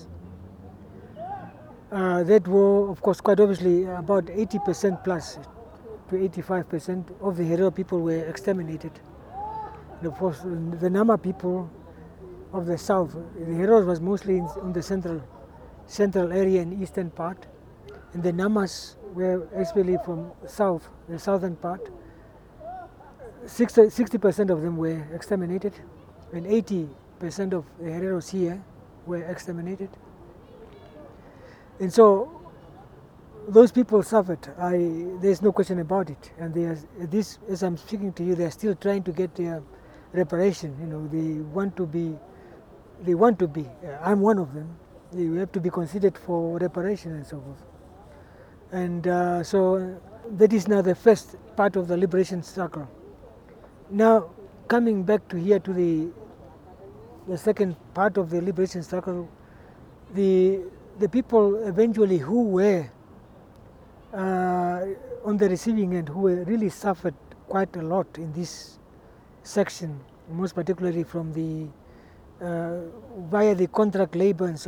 Zoo-Park, Windhoek, Namibia - The genocide...
Joe Murangi, a Herero, tells me about the 1904 war and genocide on the Herero people by the German colonial forces….
Joe Murangi is a traveler, an ex-boxer, aspiring writer and founder of 'Volunteers Association Namibia'.